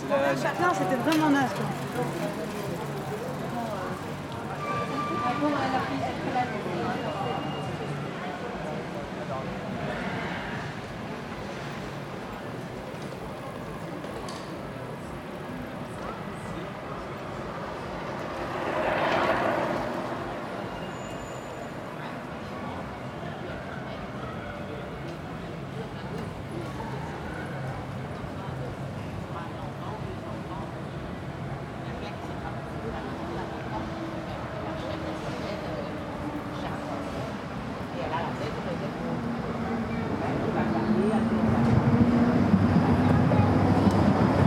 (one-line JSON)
{"title": "Avenue Louise, Bruxelles, Belgique - Car free day - journée sans voitures", "date": "2021-09-19 15:29:00", "description": "Bikes, people passing by, trams, police siren in the end.\nTech Note : Sony PCM-D100 internal microphones, wide position.", "latitude": "50.83", "longitude": "4.36", "altitude": "77", "timezone": "Europe/Brussels"}